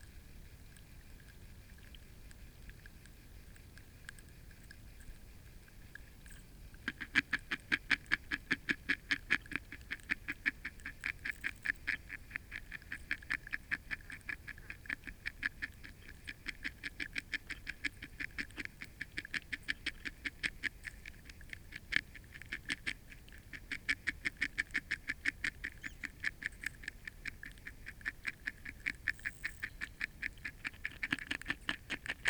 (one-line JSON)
{"title": "Jens' Place, Rogovo Rema, Mikro Papingo - Tadpoles", "date": "2017-07-18 16:31:00", "description": "I'd be fascinated to know what is going on here. Recorded with a hydrophone I can hear tadpoles nibbling from a spawn covered branch and tail twitching, but I can't place the sounds which appear to be air expulsion. It was a glorious couple of hours and this clip is just a short example. I can also hear audible signals of cicadas and frogs vocalising.", "latitude": "39.98", "longitude": "20.73", "altitude": "965", "timezone": "Europe/Athens"}